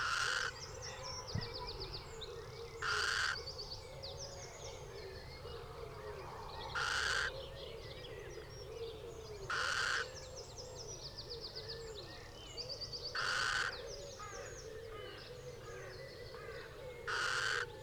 Unnamed Road, Malton, UK - little owl nest site ... close to ...
little owl nest site ... close to ... pre-amped mics in SASS ... bird calls ... song from ... blackbird ... pheasant ... wood pigeon ... wren ... collared dove ... blue tit ... great tit ... red-legged partridge ... song thrush ... chaffinch ... dunnock ... crow ... male arrives at 25:30 and the pair call together till end of track ... plenty of space between the calls
21 April 2019